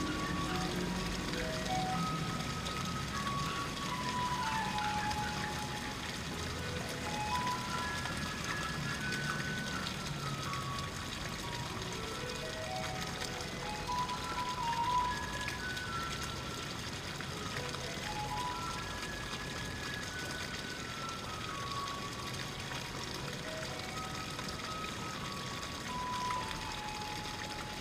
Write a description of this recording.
Along the water's edge in the moat park, a basic fountain splashes near a bridge. A garbage truck plays its tune as it drives down the street. Unidentified animals make a ruckus from several floors up inside an apartment building. Stereo mics (Audiotalaia-Primo ECM 172), recorded via Olympus LS-10.